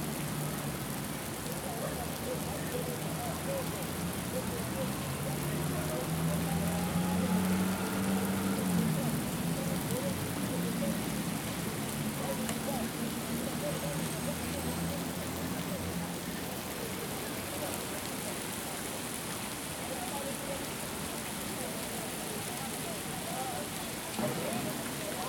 Plaza de Armas de Lima, Perú. Main square
Lima District, Peru, 18 July 2011